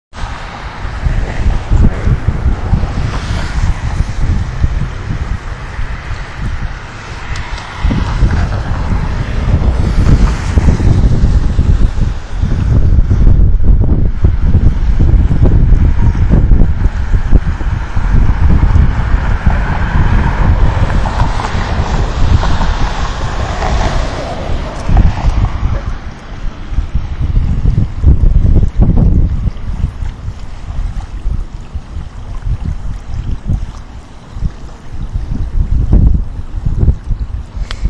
onodaga creek, the warehouse, syracuse
tdms11green, onondaga creek